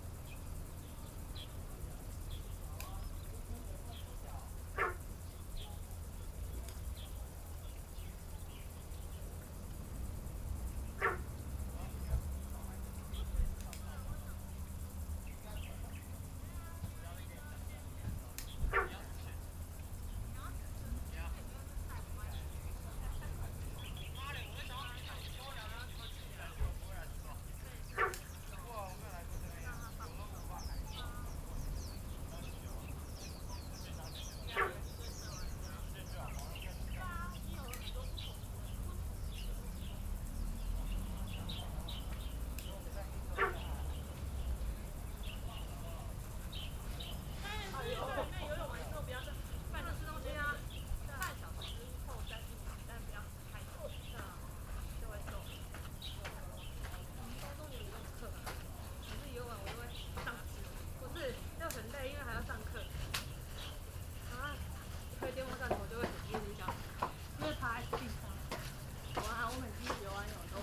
Birdsong, At the seaside, Frogs sound
Zoom H6 MS+ Rode NT4